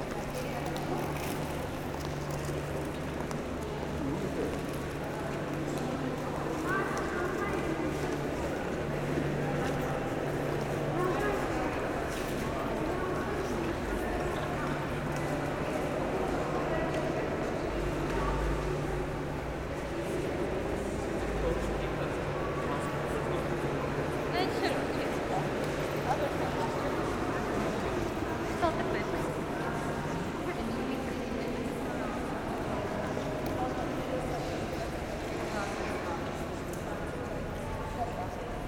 Yerevan, Arménie - Erevan train station
A train is arriving into the Erevan station, and after, I made a short walk with passengers. It's a forbidden short sound. In fact, the police caught me and asked me to stop. Train is nearly the only thing a little special in Armenia. It comes from the Soviet era.
Yerevan, Armenia, 1 September 2018, 11:45